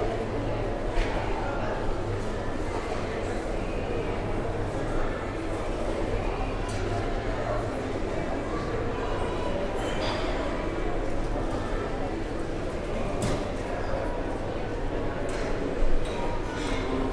Motorway service station, Downside, Cobham, Surrey, UK - Motorway service stations sound like swimming pools
Pausing at the service station on the M25 home, we were sitting having our coffees when I realised that the service station soundscape really reminded me of a huge swimming pool. The hum of electricity, the fraught children yelling, the huge expanses of glass reflecting all the sounds... ok the coffee-making sounds are less swimming-pool like but the din of many people in a large, reflective space was quite astounding. What a soup of noises. I drank my coffee and tuned in to the soup. Weirdly, you can't see the services at all on the aporee map; I think the satellite imagery predates this build. It feels very strange to overlay this very industrial, car-related racket onto a green field site. But I did double check the post-code and this is where the sound is. Maybe in thousands of years time aporisti will overlay this recording with the sounds of birds and trees once again.
1 February 2015